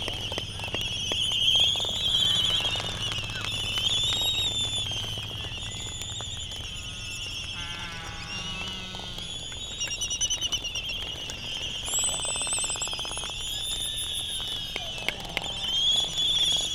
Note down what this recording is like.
Laysan albatross colony soundscape ... Sand Island ... Midway Atoll ... laysan calls and bill clapperings ... canary song ... background noise from buggies and voices ... a sunrise wake up call ... open lavalier mics ...